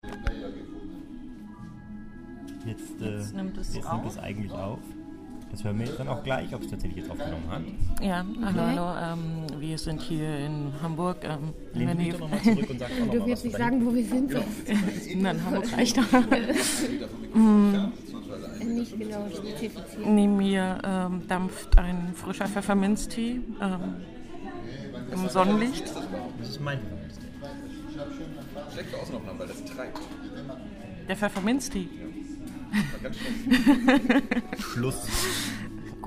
Konspiratives Frühstück 30 Oktober 2009
Vorbereitung der Feldforschung
Hamburg, Germany